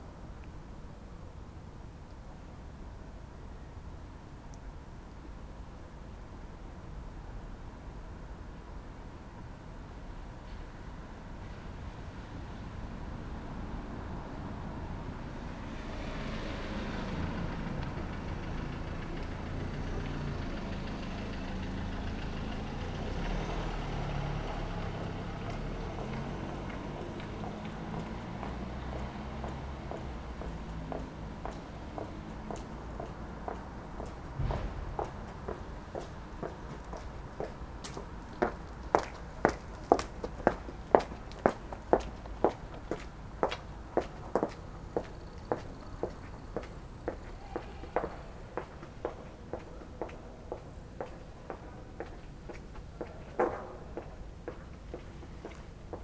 Berlin: Vermessungspunkt Friedelstraße / Maybachufer - Klangvermessung Kreuzkölln ::: 25.04.2012 ::: 01:53